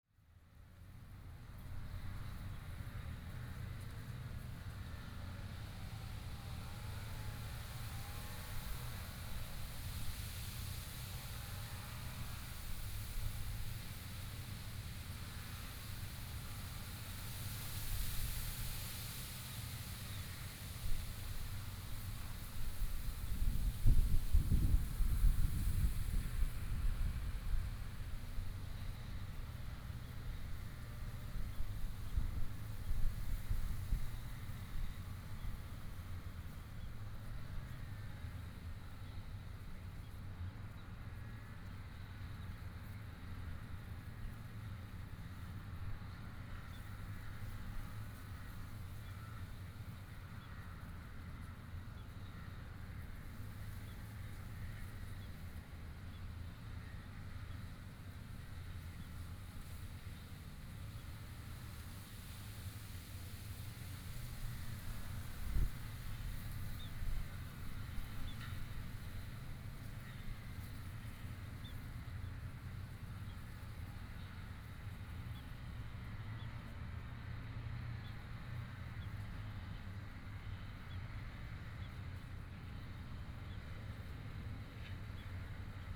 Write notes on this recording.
The other side of the river there mower noise, The sound of the wind moving the leaves, Zoom H6 M/S